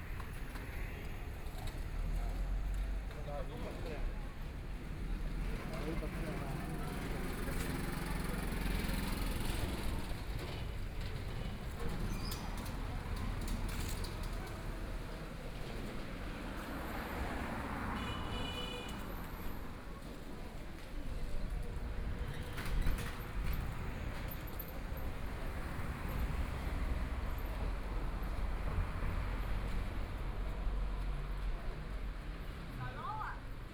Walking along the street, The crowd and the sound of the store, Traffic Sound, Zoom H6+ Soundman OKM II